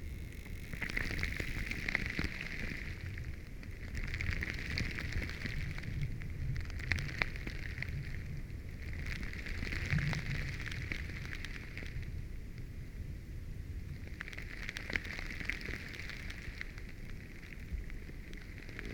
lake Alausas, Lithuania, small pieces of ice
hydrophone just under the moving small pieces of ice on a lake